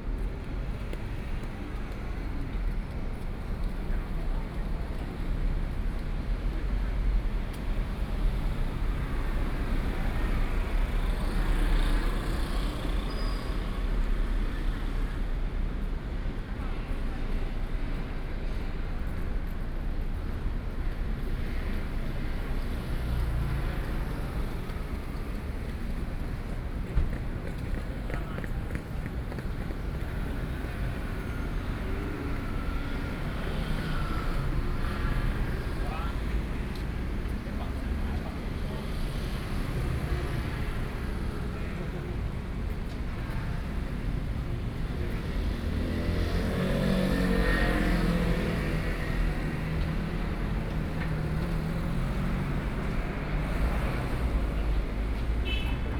in the Songshan Cultural and Creative Park Gateway, The traffic on the street with the crowd between, Sony PCM D50 + Soundman OKM II